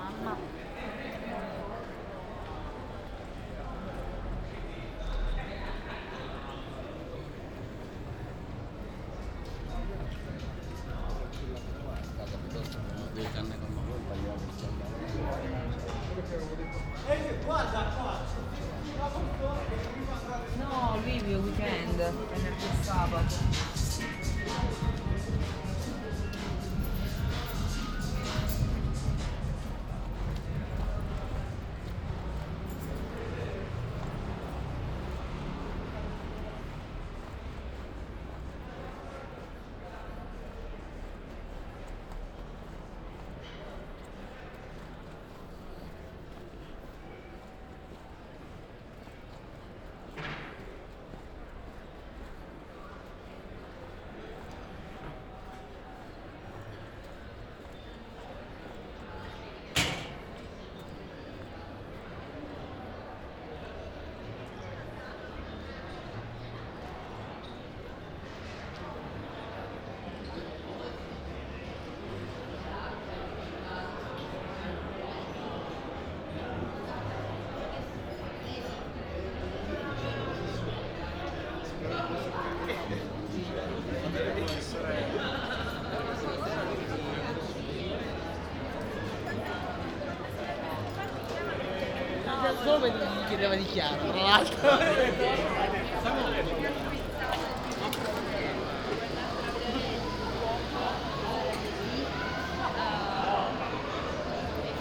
Ascolto il tuo cuore, città. I listen to your heart, city. Chapter LXXXV - Night walk round 11 p.m. but Bibe Ron is closed in the days of COVID19 Soundwalk
"Night walk round 11 p.m. but Bibe Ron is closed in the days of COVID19" Soundwalk"
Chapter CLXXIII of Ascolto il tuo cuore, città. I listen to your heart, city
Wednesday, May 19th, 2021. The first night of new disposition for curfew at 11 p.m. in the movida district of San Salvario, Turin. Walk is the same as about one year ago (go to n.85-Night walk et Bibe Ron) but this night Bibe Ron is closed. About one year and two months after emergency disposition due to the epidemic of COVID19.
Start at 10:25 p.m. end at 11:02 p.m. duration of recording 37’09”
As binaural recording is suggested headphones listening.
The entire path is associated with a synchronized GPS track recorded in the (kmz, kml, gpx) files downloadable here:
similar to 85-Night walk et Bibe Ron